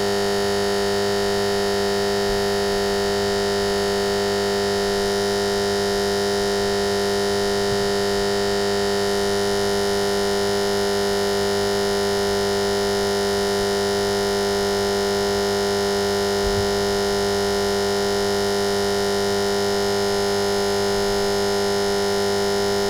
Poznan, Strozynskiego street - electric box
an electric box buzzing angrily through the night.